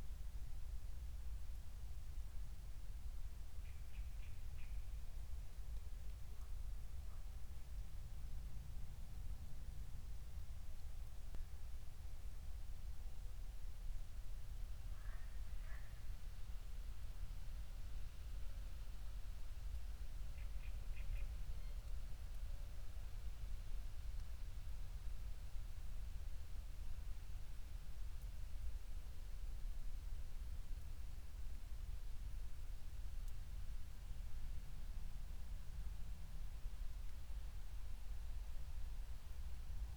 Berlin, Buch, Mittelbruch / Torfstich - wetland, nature reserve
01:00 Berlin, Buch, Mittelbruch / Torfstich 1